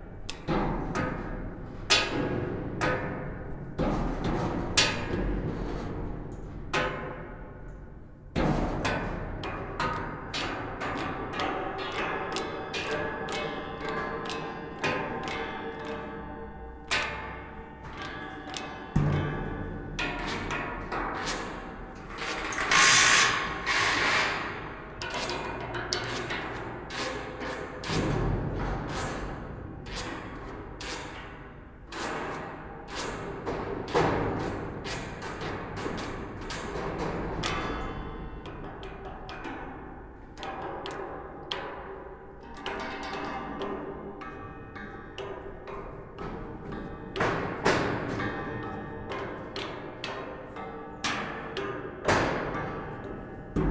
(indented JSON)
{
  "title": "Saint-Aubin-lès-Elbeuf, France - Footbridge",
  "date": "2016-09-19 11:30:00",
  "description": "We are both playing with a metallic footbridge.",
  "latitude": "49.30",
  "longitude": "1.00",
  "altitude": "5",
  "timezone": "Europe/Paris"
}